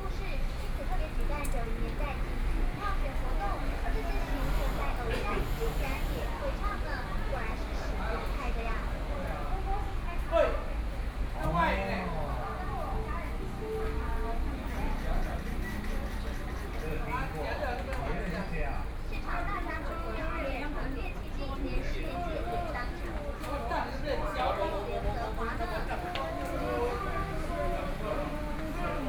Rainy Day, Sitting in front of a convenience store, Out of people in the temple and from, Sound convenience store advertising content, Binaural recordings, Zoom H4n+ Soundman OKM II
Yilan County, Taiwan, 7 November 2013, 11:49